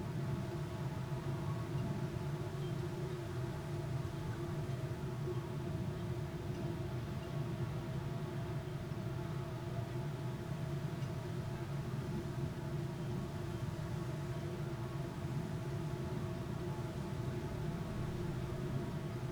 workum: marina - the city, the country & me: mic in metal box trolley
wind blown reed, mic in a metal box trolley
the city, the country & me: june 28, 2013